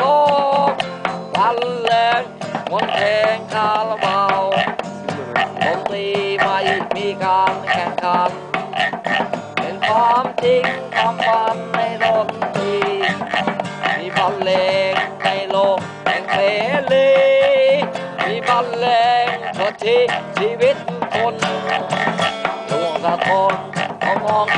Alokaleka Thai Beach Today we have LiveMusik
Trat, Thailand